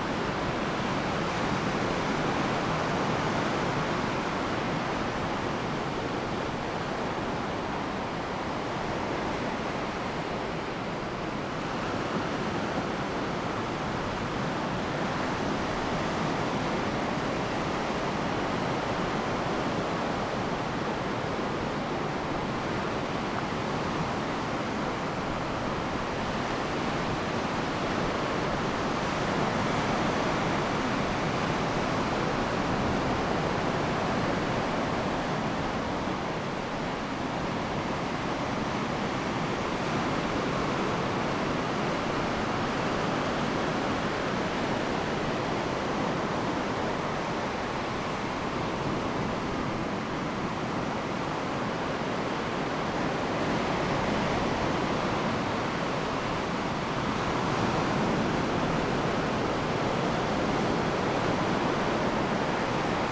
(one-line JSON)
{"title": "佳鵝公路, Hengchun Township - the waves", "date": "2018-04-23 08:23:00", "description": "at the seaside, Sound of the waves\nZoom H2n MS+XY", "latitude": "21.96", "longitude": "120.84", "altitude": "4", "timezone": "Asia/Taipei"}